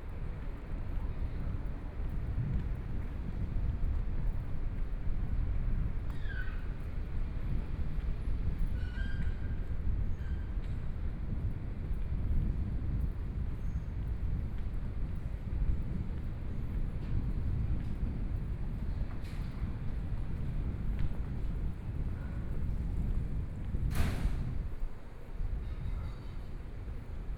Jinzhou St., Taipei City - walking in the Street
walking in the Street, Various shops voices, Traffic Sound
Please turn up the volume a little. Binaural recordings, Sony PCM D100+ Soundman OKM II